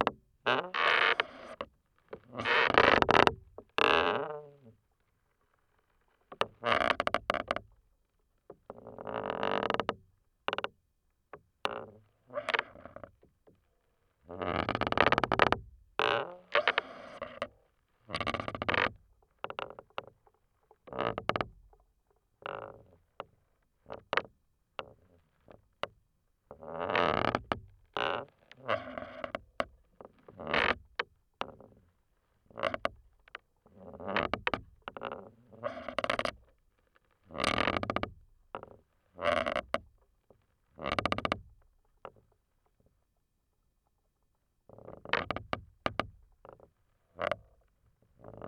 workum, het zool: marina - the city, the country & me: marina, sailboat, belaying pin
wooden belaying pin of a sailboat, contact mic recording
the city, the country & me: august 2, 2012